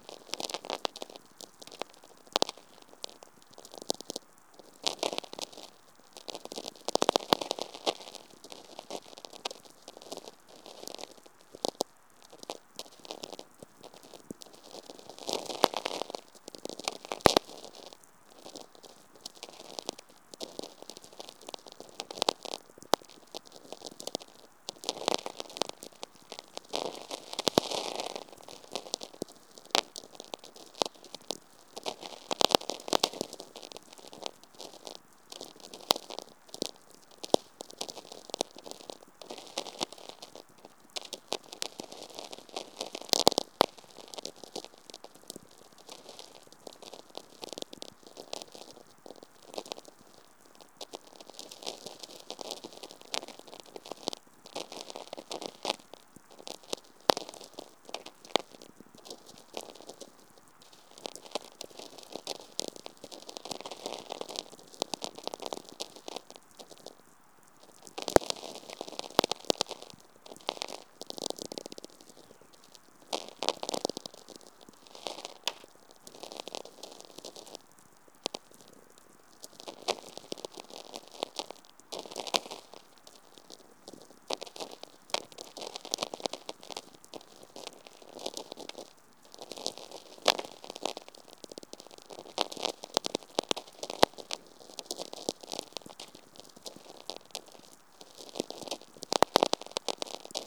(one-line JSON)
{"title": "Kintai, Lithuania, VLF listening", "date": "2022-07-23 21:20:00", "description": "With VLF receiver on the shore of Curonian lagoon. Dark sky over Curonian Spit. Absolutely clear receiving without any interference.", "latitude": "55.42", "longitude": "21.25", "timezone": "Europe/Vilnius"}